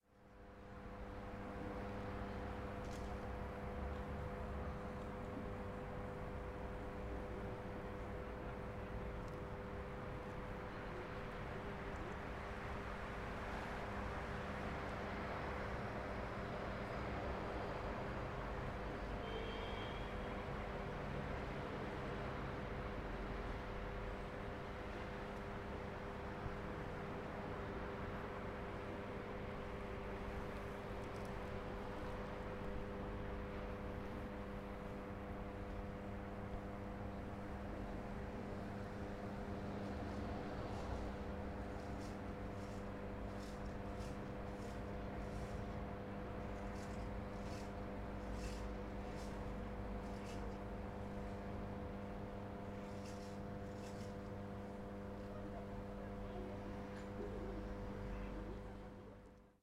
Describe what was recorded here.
audio_bialystok soundscape, the sound of power generator, at the galery located in the former power plant